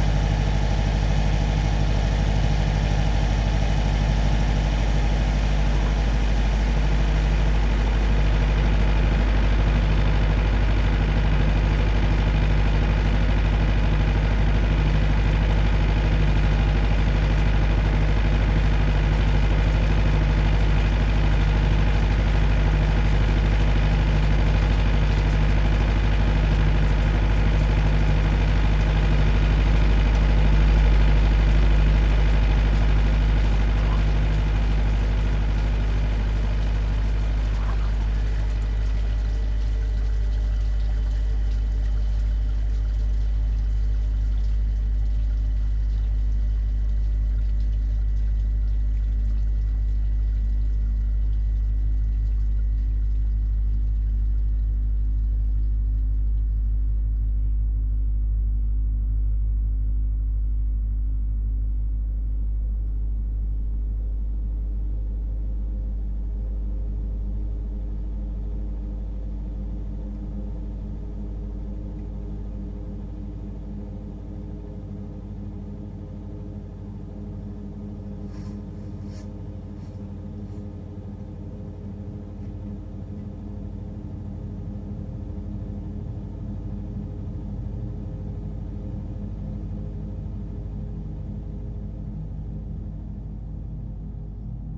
Svalbard, Svalbard and Jan Mayen - the carbon storage
The University of Longyearbyen have a co2 storage project which was under testing one day that I passed by.
Longyearbyen, Svalbard and Jan Mayen, 29 September